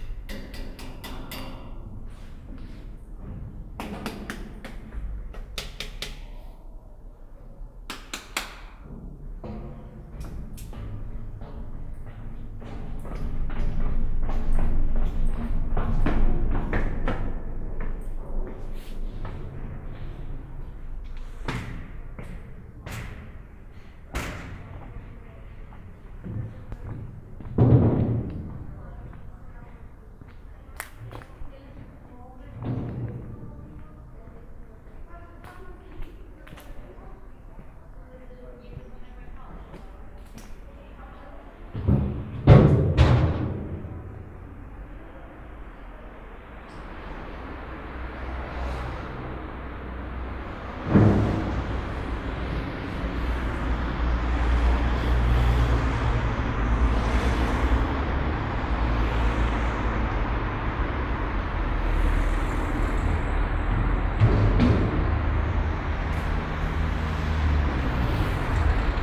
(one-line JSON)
{"title": "R. Marquês de Ávila e Bolama, Covilhã, Portugal - Binaural", "date": "2018-03-21 17:45:00", "description": "Workshop Criação de paisagens sonoras para documentário.", "latitude": "40.28", "longitude": "-7.51", "altitude": "625", "timezone": "Europe/Lisbon"}